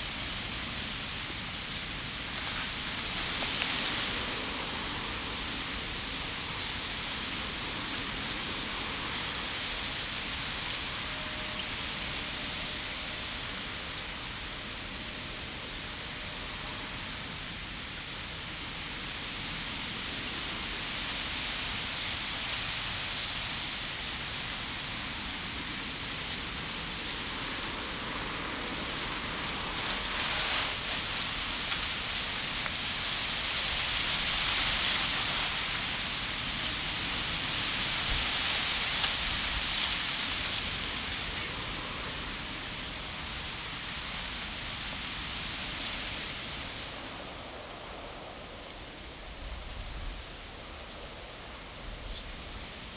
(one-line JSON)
{"title": "počerady Česká republika - rakosí ve větru", "date": "2016-12-02 13:31:00", "latitude": "50.42", "longitude": "13.66", "altitude": "250", "timezone": "Europe/Prague"}